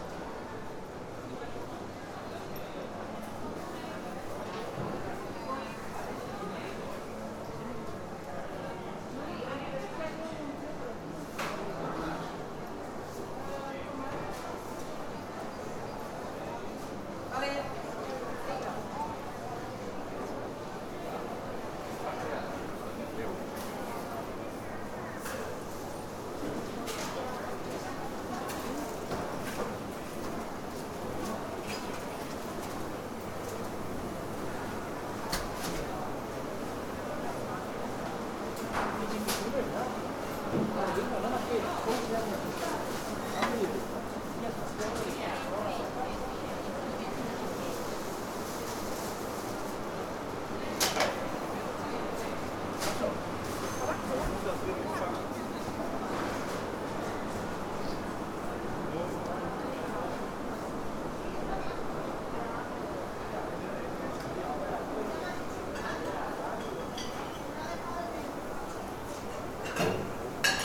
{"title": "Mercat de Santa Caterina", "date": "2011-01-24 12:11:00", "description": "Market with a Gaudinian style structure.", "latitude": "41.39", "longitude": "2.18", "altitude": "19", "timezone": "Europe/Madrid"}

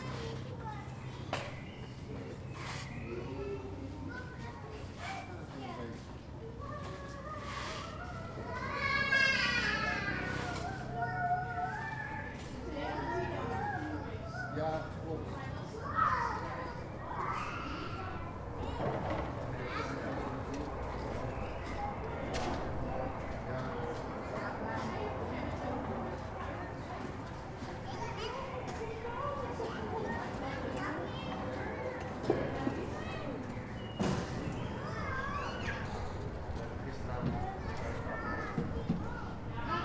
{"title": "Kortenbos, Den Haag, Nederland - End of the day at the daycare", "date": "2013-05-06 17:00:00", "description": "Parents collect their children at the daycare.\nRecorded with Zoom H2 internal mice. Some slight wind.", "latitude": "52.08", "longitude": "4.31", "altitude": "8", "timezone": "Europe/Amsterdam"}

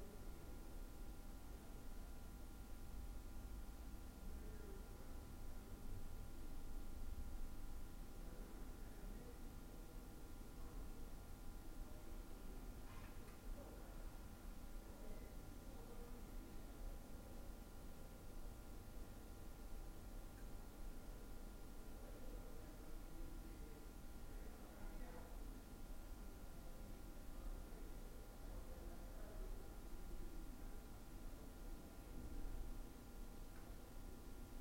{"title": "kasinsky: a day in my life", "date": "2010-05-26 19:35:00", "description": "backstage, pending actors...", "latitude": "42.86", "longitude": "13.57", "altitude": "158", "timezone": "Europe/Rome"}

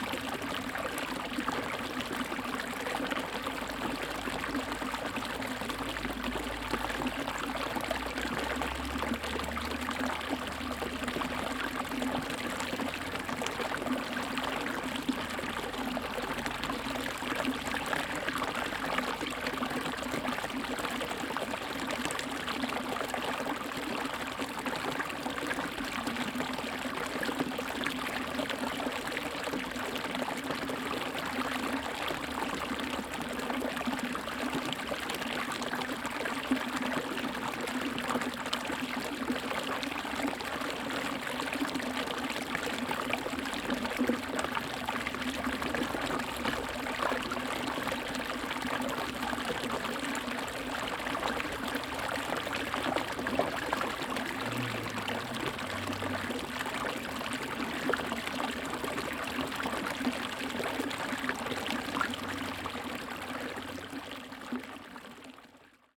{"title": "見學中心紙教堂, 桃米里 Puli Township - Flow", "date": "2016-05-19 07:00:00", "description": "Aqueduct, Flow sound\nZoom H2n MS+XY", "latitude": "23.94", "longitude": "120.93", "altitude": "479", "timezone": "Asia/Taipei"}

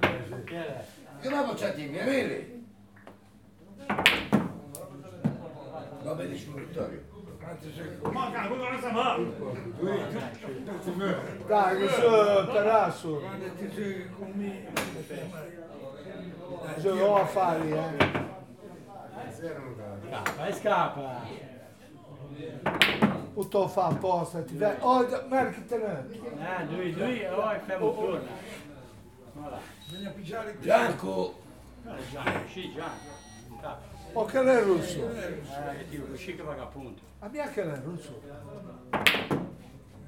{"title": "Viale Rimembranza, Sestri Levante GE, Italie - Bocette in fishermen house", "date": "2016-10-30 15:20:00", "description": "Every day, retired fishermen go to the Fishermens House to play Bocette. The men throw billiard balls on the billiard table, while interpreting each other in a regional dialect. Tous les jours, les pêcheurs retraités se rendent à la Maison des pêcheurs pour jouer au bocette. Les hommes lancent des boules de billard sur le billard, tout en sinterpelant les uns les autres dans un dialecte régional.", "latitude": "44.27", "longitude": "9.39", "altitude": "3", "timezone": "Europe/Rome"}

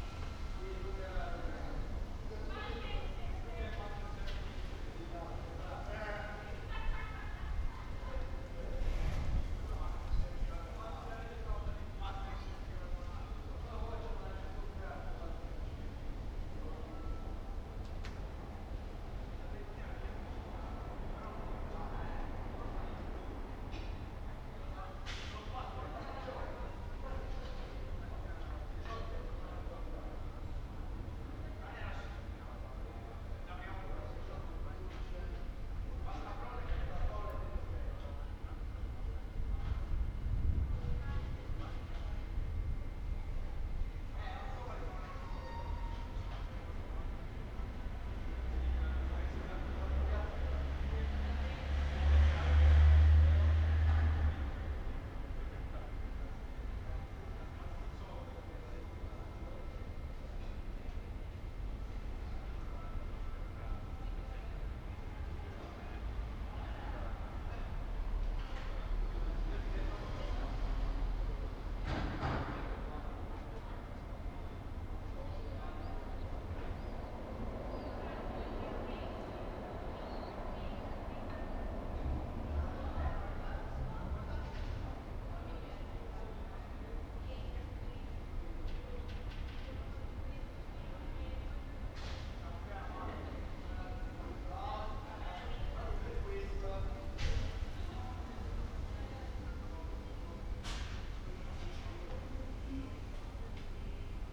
{"date": "2020-06-05 15:43:00", "description": "\"Friday afternoon June 5th with less laughing students and wind in the time of COVID19\" Soundscape\nChapter XCVIII of Ascolto il tuo cuore, città. I listen to your heart, city\nFriday June 5th 2020. Fixed position on an internal terrace at San Salvario district Turin, eighty-seven days after (but day thirty-three of Phase II and day twanty of Phase IIB and day fourteen of Phase IIC) of emergency disposition due to the epidemic of COVID19.\nStart at 3:43 p.m. end at 4:09 p.m. duration of recording 25’46”", "latitude": "45.06", "longitude": "7.69", "altitude": "245", "timezone": "Europe/Rome"}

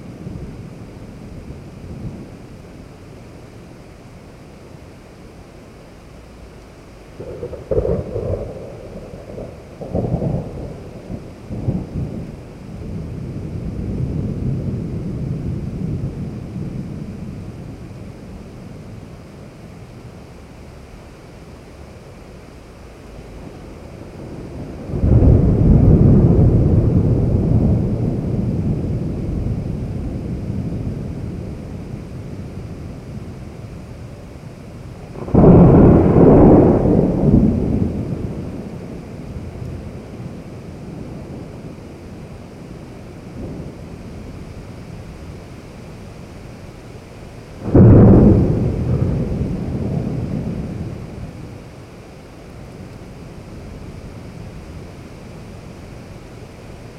{"title": "R. Alabastro, Aclimação, São Paulo - Thunder and rain in Sao Paulo", "date": "2018-03-28 17:00:00", "description": "Thunder and rain in Sao Paulo.\nRecorded from the window of the flat, close to Parque Aclimaçao.", "latitude": "-23.57", "longitude": "-46.63", "altitude": "765", "timezone": "America/Sao_Paulo"}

